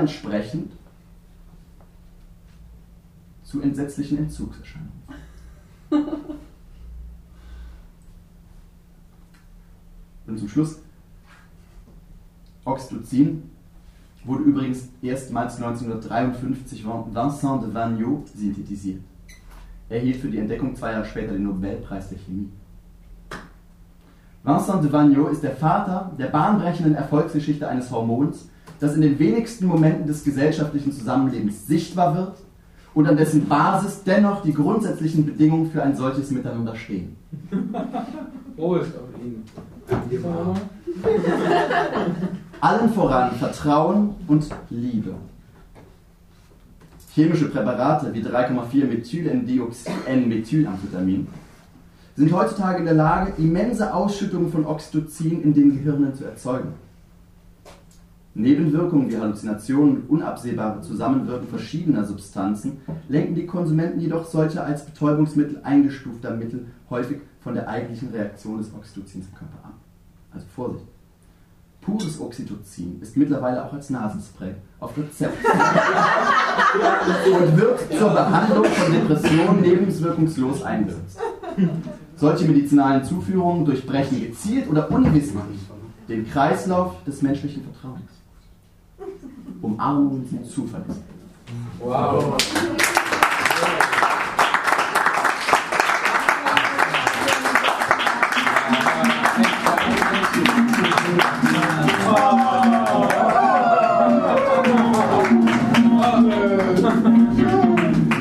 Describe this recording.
This is the second text, entitled ::Das Stöffchen::